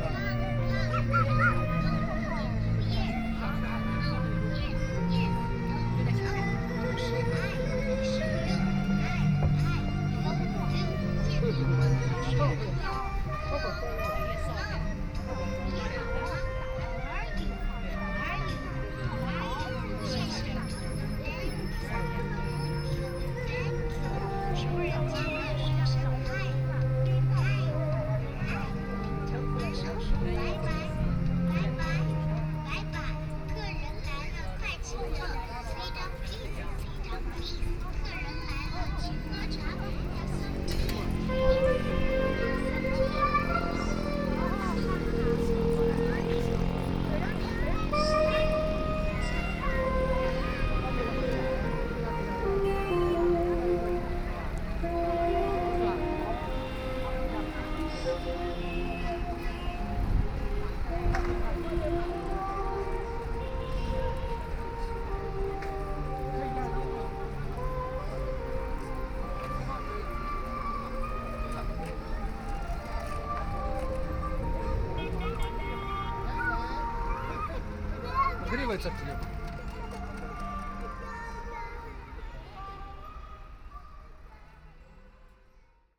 At the junction, Traffic Sound, Saxophones, Merchandising voice, Binaural recording, Zoom H6+ Soundman OKM II
Shanghai, China, 23 November 2013, 11:28